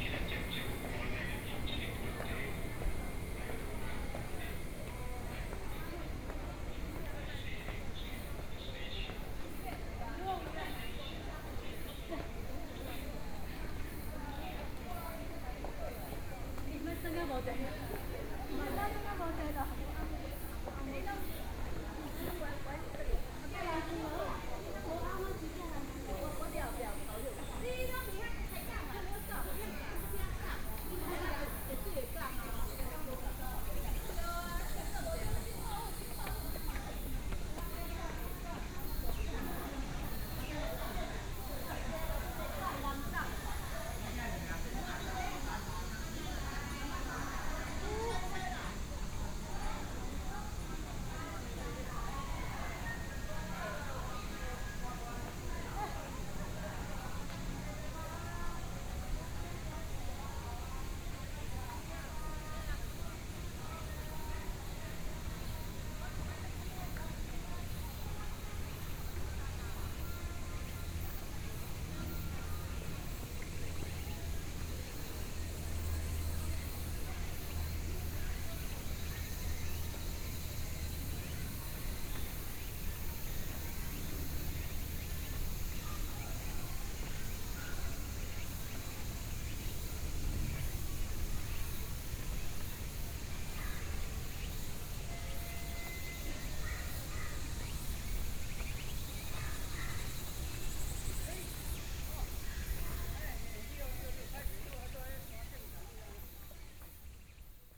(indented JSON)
{
  "title": "HutoushanPark, Taoyuan City - soundwalk",
  "date": "2013-09-11 09:15:00",
  "description": "walk in the Park, Sony PCM D50 + Soundman OKM II",
  "latitude": "25.00",
  "longitude": "121.33",
  "altitude": "154",
  "timezone": "Asia/Taipei"
}